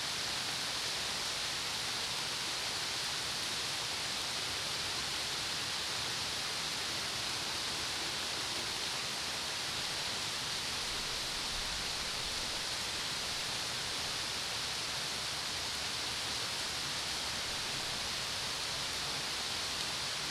Borek, Wroclaw, Poland - Summer storm, gentle rain on Jaworowa 39
Gerard Nerval, Chimery
sonet ostatni : Złote wersy (Vers dorés)
przekład Anka Krzemińska [Anna Sileks]
Ach cóż! Wszystko jest wrażliwe!
Pitagoras
Człowieku ! Wolnomyślicielu ! - sądzisz, żeś jeden myślący
W świecie tym, gdzie życie w każdej rzeczy lśniące :
Od sił coś opanował twa wolność zależny
Lecz Wszechświat twoje znawstwa głucho sponiewierzy.
Szanuj w bestii jej siłę działająca :
W każdym kwiecie jest dusza w Naturze wschodząca ;
W metalu jest ukryta miłości misteria :
Wszystko jest wrażliwe ! I mocy z bytu twego pełne !
Strzeż się w ślepym murze szpiegującego wzroku :
Przy każdej materii słowo krąży boku ...
Nie wymuszaj jej służby obojętnym celom !
Często w mrocznym bycie Bóg ukryty mieszka ;
I jak narodzone oko pokryte jest rzęsami
W łupinie czysty duch wzrasta wzmocnionej kamieniami !
Vers dorés
Homme ! libre penseur - te crois-tu seul pensant
Dans ce monde où la vie éclate en toute chose :
Des forces que tu tiens ta liberté dispose
9 August 2013